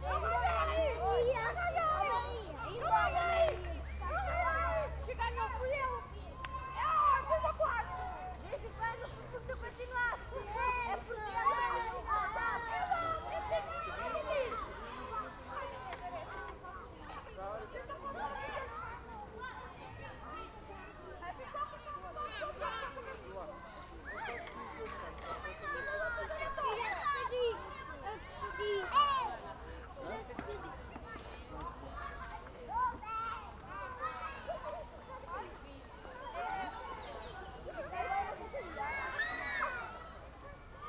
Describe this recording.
playground, early summer evening